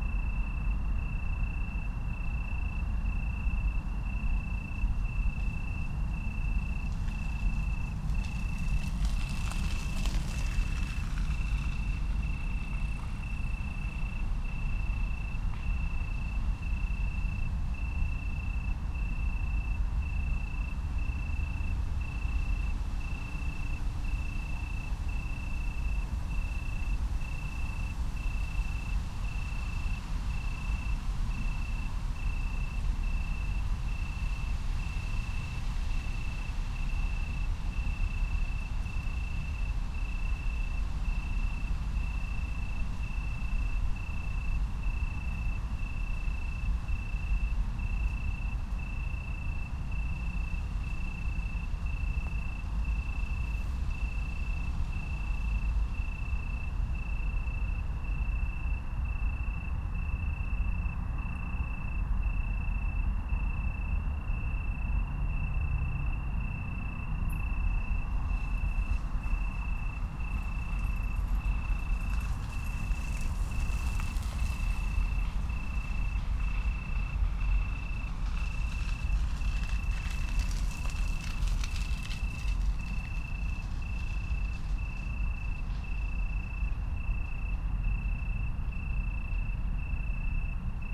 Stadtgarten, Köln - evening ambience, crickets, train
Köln Stadtgarten, place revisited a year later, attracted by the gentle sound of the crickets, further: a rain, bicycles, pedestrians, traffic
(Sony PCM D50, Primo EM172)